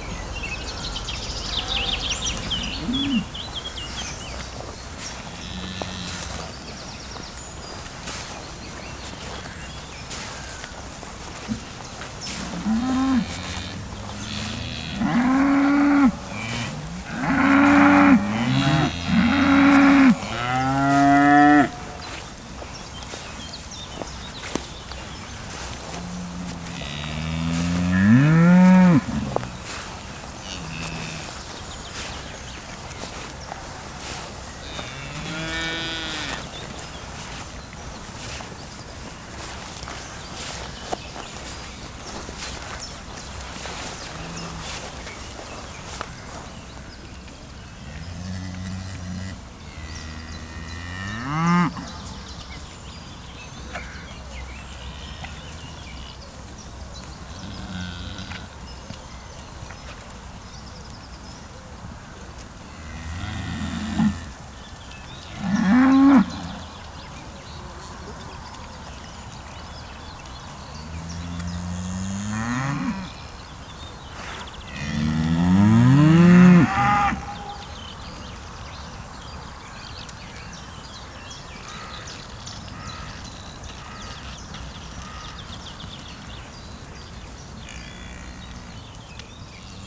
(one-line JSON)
{"title": "Vatteville-la-Rue, France - Cows", "date": "2016-07-23 08:10:00", "description": "Angry cows in a pasture. Cows are disgruntled because there's a lot of veals and we are very near.", "latitude": "49.52", "longitude": "0.69", "altitude": "3", "timezone": "Europe/Paris"}